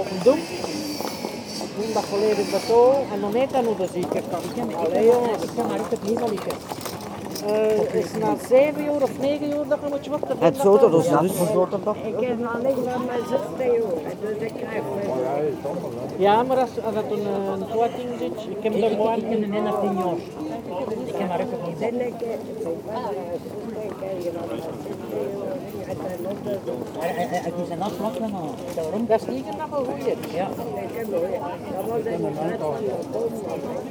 Aalst, België - Local market
Grote Markt, Nieuwstraat, Hopmarkt. Long walk in the local market, taking place on Saturday morning. At several times, we hear the elderly talking to each other.